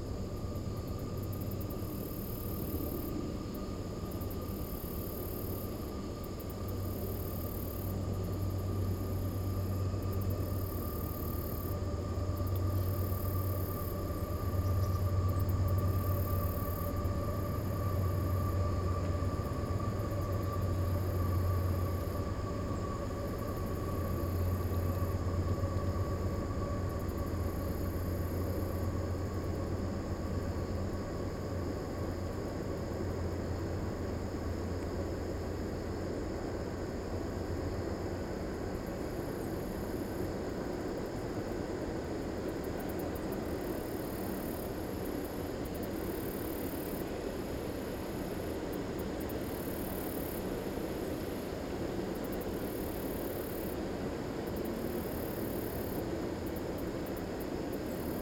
Castlewood Loop, Eureka, Missouri, USA - Castlewood Loop Train
Sound of a train passing in the woods.
17 October 2020, 16:00